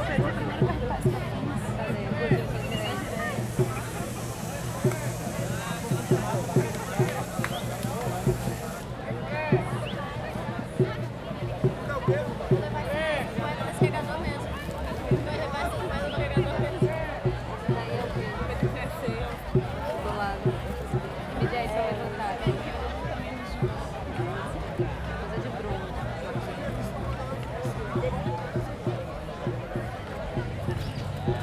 Salvador, Bahia, Brazil - Marijuana March Ambience 2
The ambience before a legalise marijuana march in Salvador, Brazil
- Barra, Salvador - Bahia, Brazil